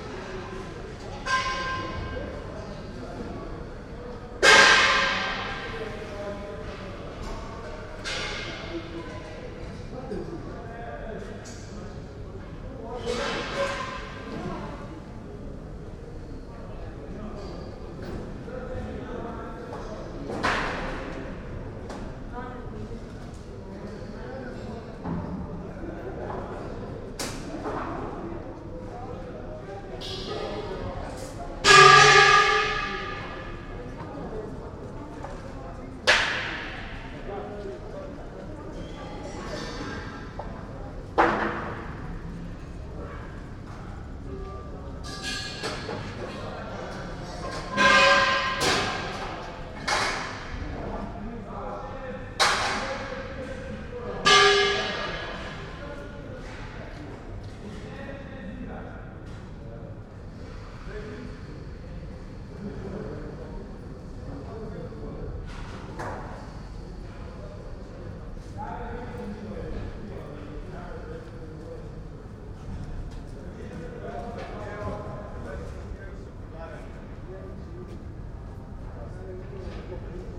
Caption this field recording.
in a quiet courtyard behind maribor's main square, workers install platforms and seating inside a large tent